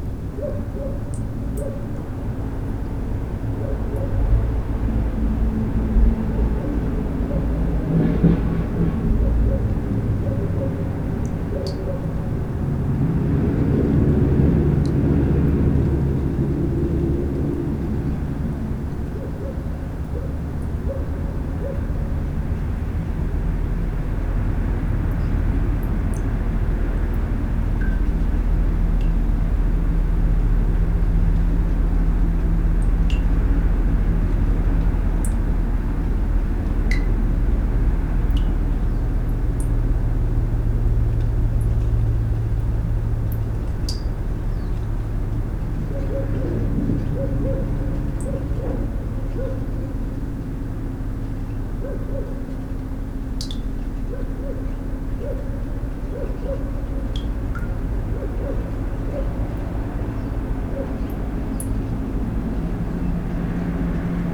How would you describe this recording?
some water tube on the pathway